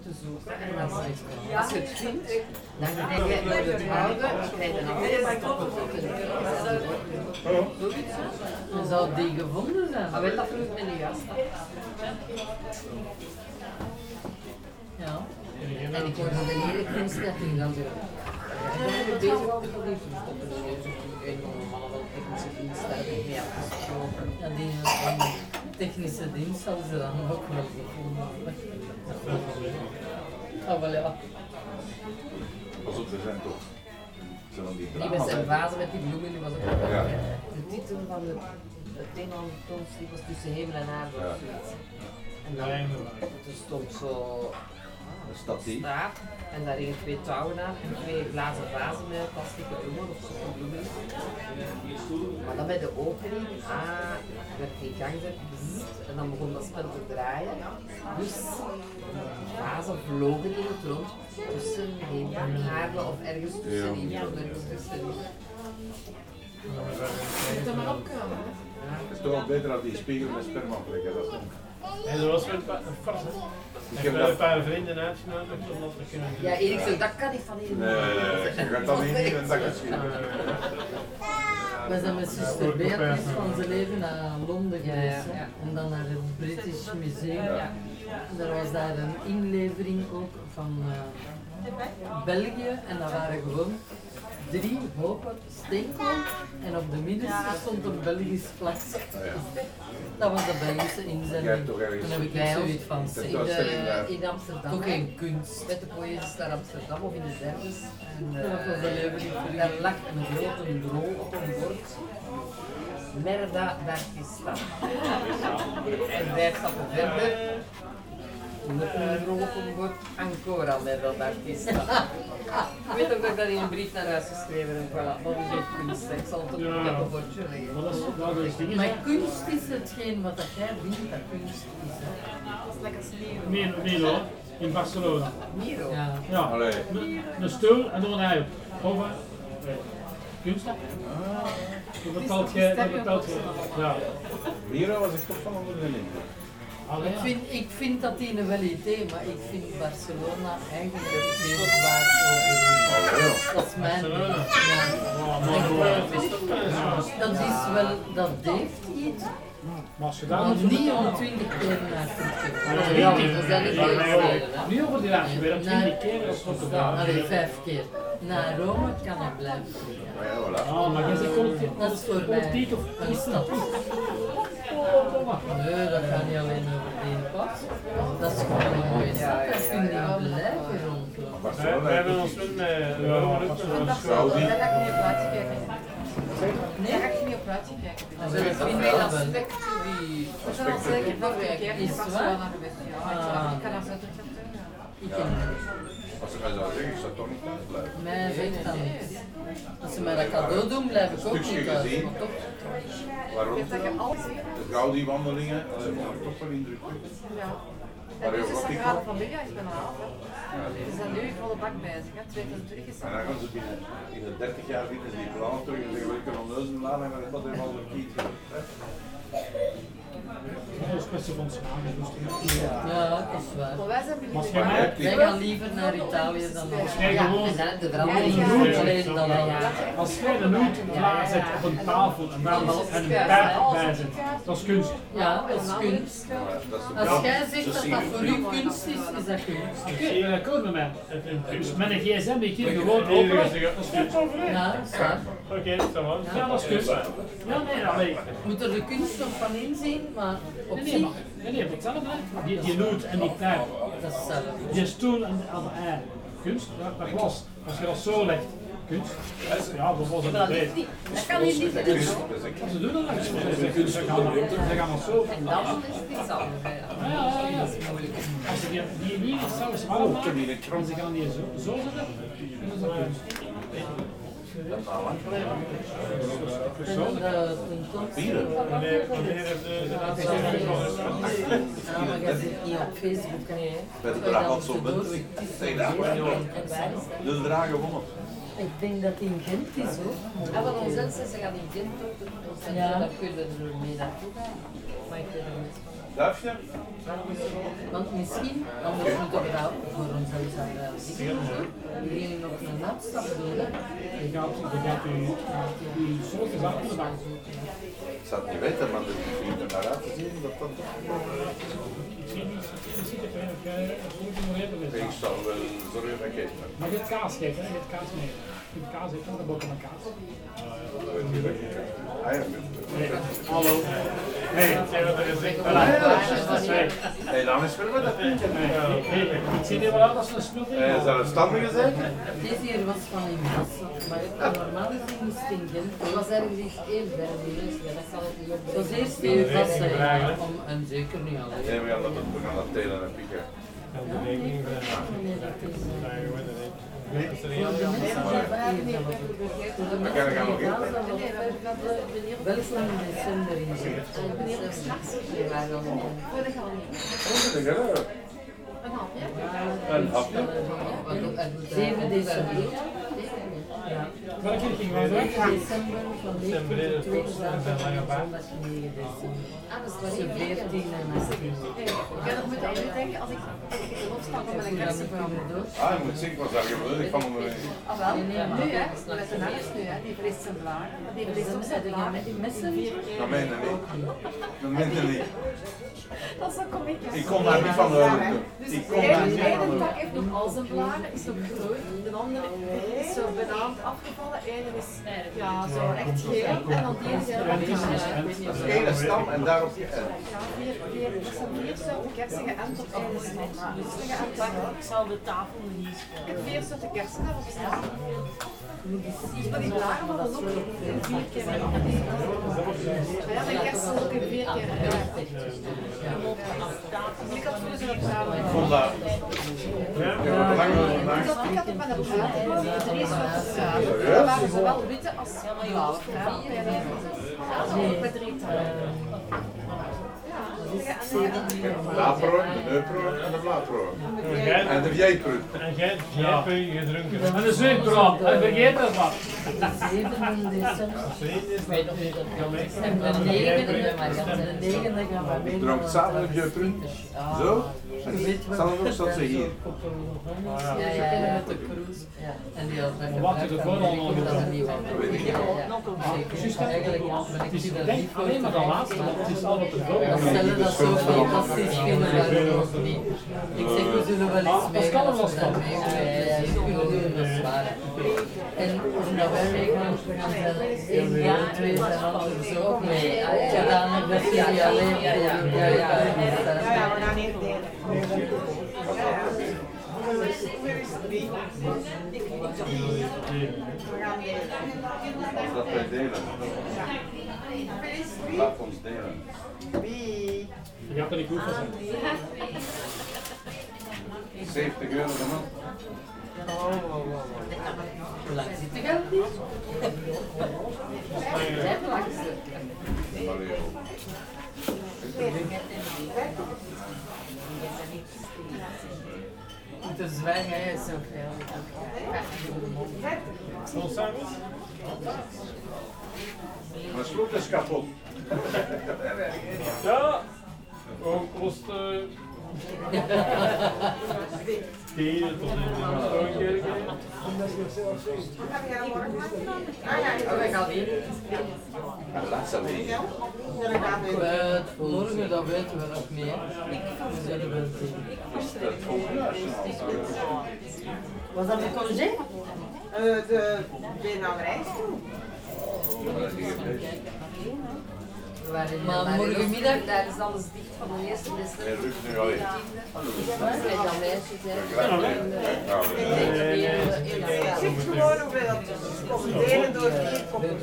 Warm and quiet ambiance in a Belgian restaurant of the coast. On the nearby table, some old persons drink Sint-Idelsbald beer, the local beer. Two of them make mimic they are dying, everybody laugh. On the coast during the winter time, every bar, restaurant, tavern, have a warm and welcoming ambience. It's not especially the case in summer, because of the tourists inrush.
Koksijde, Belgium, 16 November 2018, ~19:00